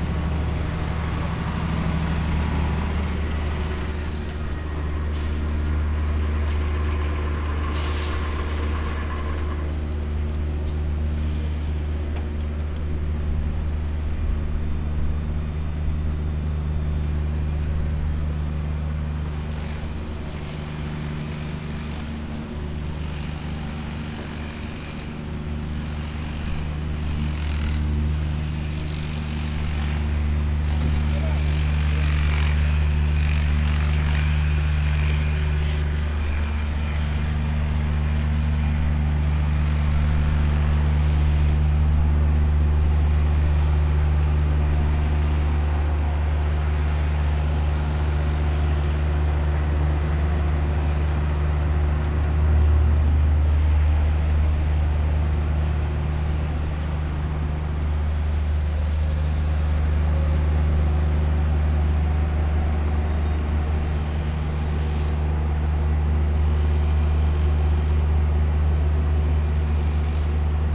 Poznan, Fredry Str. near one of many university buildings - road works at Fredry
a few construction workers squads operating their drills, pneumatic hammers and other pressurized tools, fixing the pavement
Poznań, Poland, 18 July 2012, ~11:00